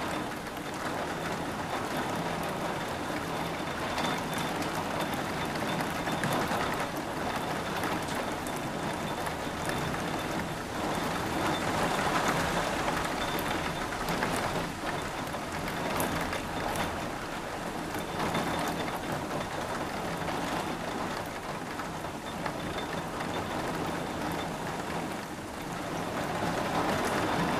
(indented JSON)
{
  "title": "Anholt Skole, Danmark - Rain shower",
  "date": "2012-08-22 17:00:00",
  "description": "The recording was made inside, under a window, and documents the varied intensity of a heavy rain shower. It was made using a Zoom Q2HD on a tripod.",
  "latitude": "56.70",
  "longitude": "11.55",
  "altitude": "10",
  "timezone": "GMT+1"
}